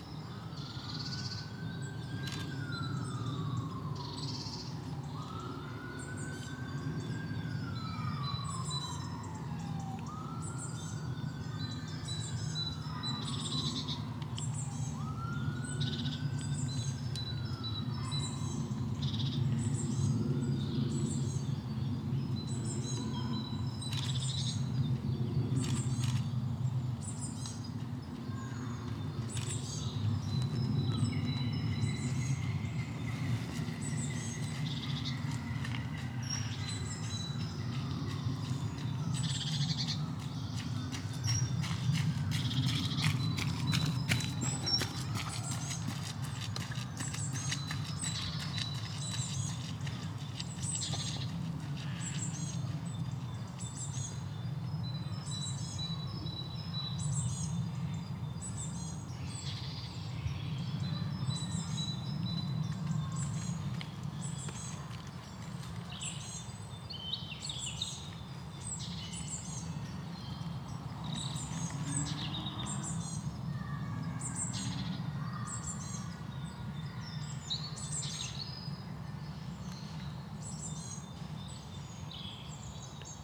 Mile End, Colchester, Essex, UK - Noisy birds in Highwoods Country Park
Birds of various types being rather raucous in the woods. Best listened with headphones.